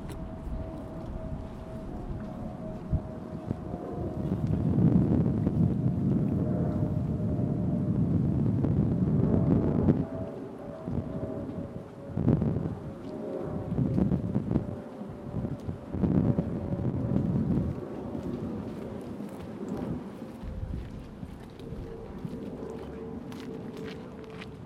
Part twoof a soundwalk on July 18th, 2010 for World Listening Day in Greenlake Park in Seattle Washington.
Seattle, WA, USA